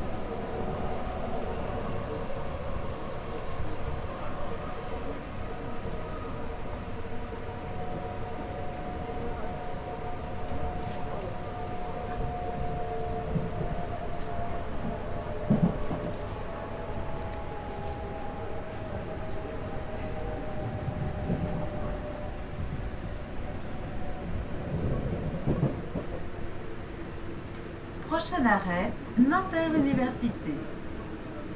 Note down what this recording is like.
The train in the Houilles station, going to Paris Saint-Lazare.